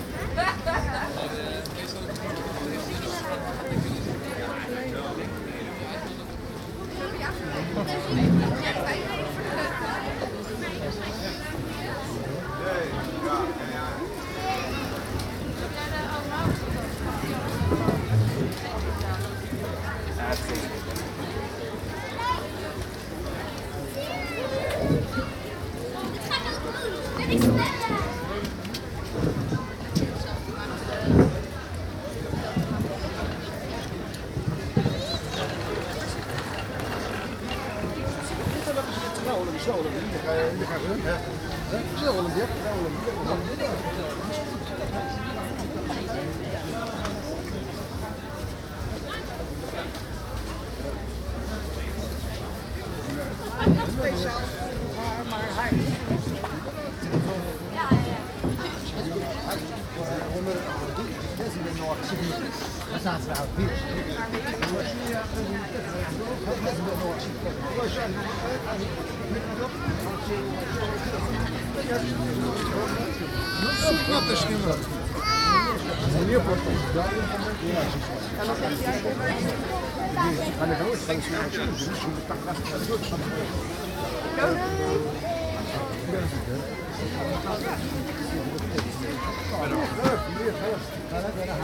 Zeeheldenkwartier, Den Haag, Nederland - "Home Made Market"
Binaural recording made on the bi-annual 'Home Made Marker' in the Zeehelden Quater of The Hague.